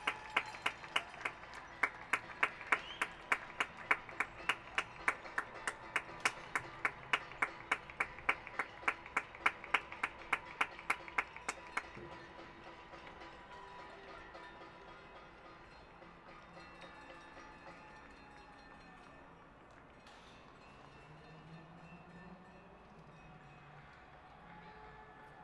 This is the neighbours from the West End showing gratitude at 7 p.m. for the health workers and people in the front lines around the world. Recorded from the 6th floor of my balcony.